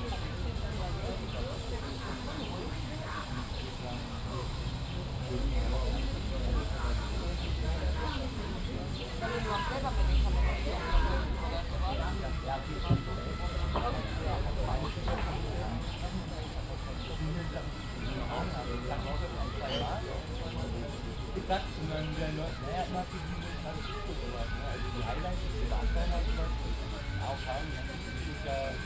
{"title": "berlin, lohmühle wagenburg - sunday evening ambience", "date": "2010-06-27 19:50:00", "description": "informal living space, people reside in waggons close to the canal. summer sunday evening ambience. (binaural recording, use headphones)", "latitude": "52.49", "longitude": "13.44", "altitude": "32", "timezone": "Europe/Berlin"}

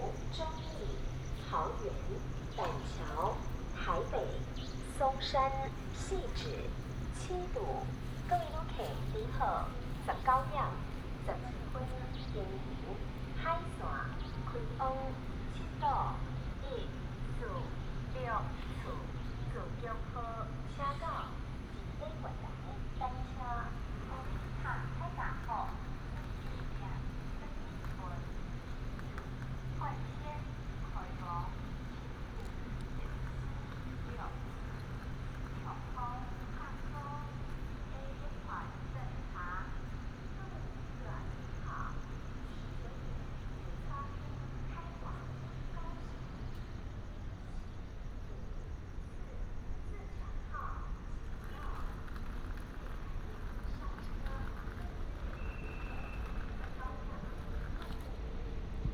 {"title": "斗六火車站, Yunlin County - At the station platform", "date": "2017-03-03 19:07:00", "description": "At the station platform", "latitude": "23.71", "longitude": "120.54", "altitude": "56", "timezone": "Asia/Taipei"}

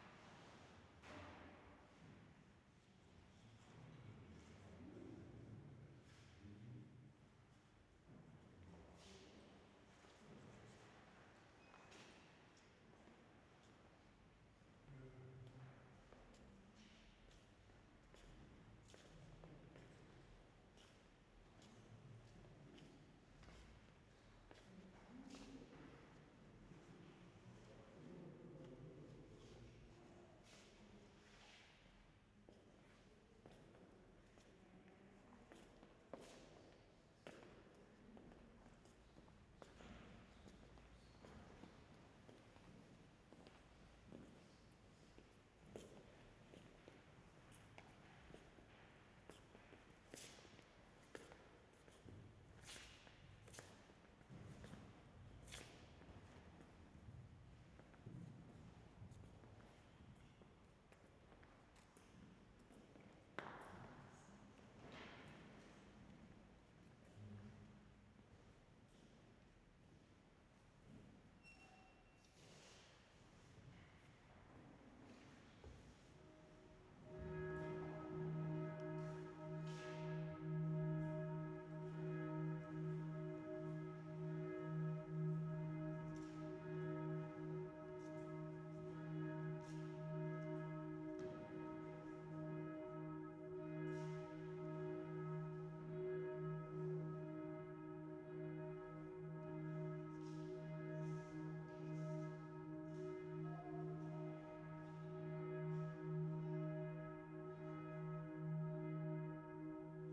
{"title": "Stiftkirche St. Peter, Salzburg, Österreich - Raumklang Stiftkirche", "date": "2007-04-17 11:50:00", "description": "Touristen, Glocken, Schritte. Am Schluss Priester mit Gehrock durchschreitet das Kirchenschiff.", "latitude": "47.80", "longitude": "13.04", "altitude": "449", "timezone": "Europe/Vienna"}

